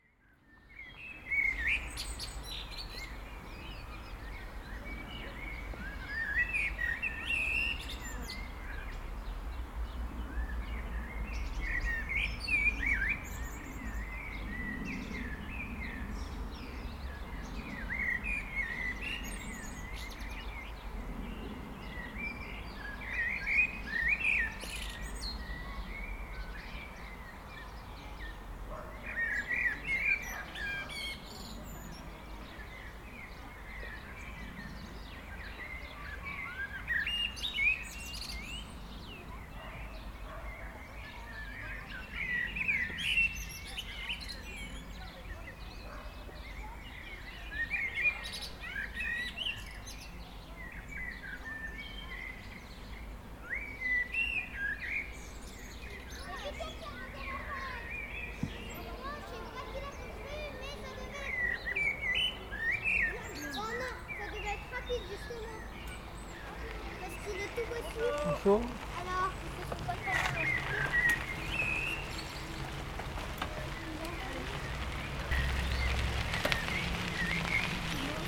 A Serrières en allant vers la via Rhôna passage de jeunes cyclistes en écoutant les merles.